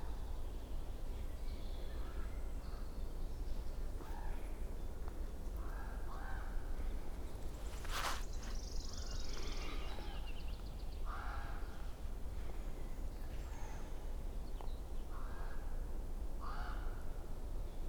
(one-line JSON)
{"title": "Eurovelo, Polska - crows getting away", "date": "2019-06-11 16:36:00", "description": "trying to catch a group of active crows on a forest path. (roland r-07)", "latitude": "54.79", "longitude": "17.75", "altitude": "14", "timezone": "Europe/Warsaw"}